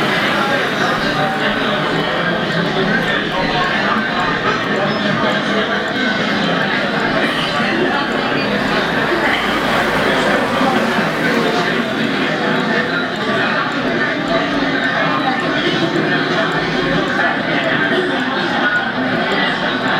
Lázně, Bus Stop
Its site-specific sound instalation. Sounds of energic big cities inside bus stops and phone booths in small town.
Original sound record of Barcelona by